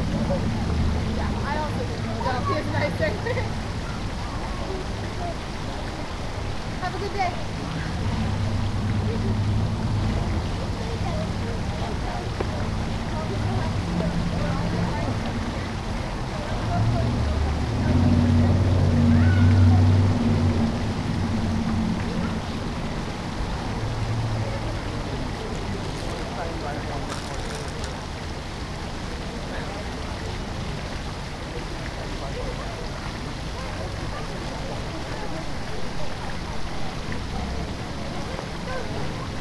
A recording done at a small park in the middle of Marietta Square. People were out and about due to the sunshine and unseasonably warm weather, and a group of people were in the center of the park for some kind of gathering. There's a fountain at the very center of the park, and the entire area is surrounded by roads. There's also a children's play area to the right of the recorder. Multiple people walked by and inspected my recording rig, but thankfully nobody disturbed it or asked me what it was while it was on. Recorded with a Tascam dr-100mkiii and a windmuff.